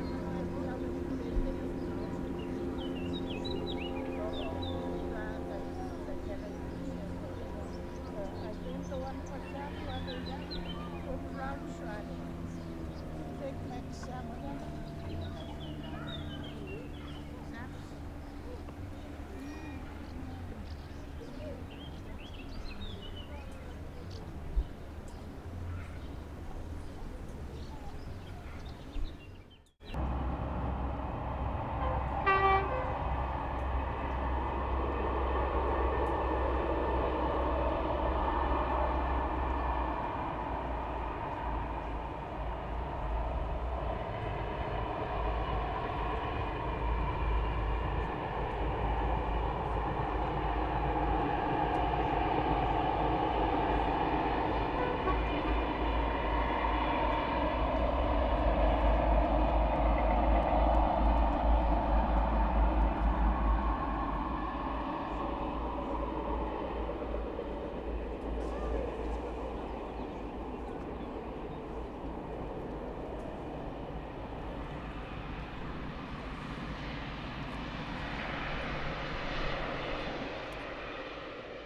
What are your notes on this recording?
Estacao de Aregos, Portugal. Mapa Sonoro do Rio Douro. Aregos railway station, Portugal. Douro River Sound Map